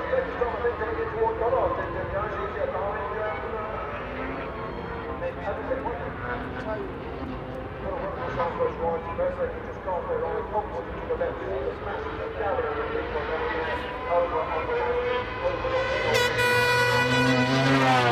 Race ... part two ... Starkeys ... Donington Park ... 990cc four strokes and 500cc two strokes ... race plus associated noise ... air horns ... planes flying into East Midlands airport ...
Derby, UK, 13 July 2003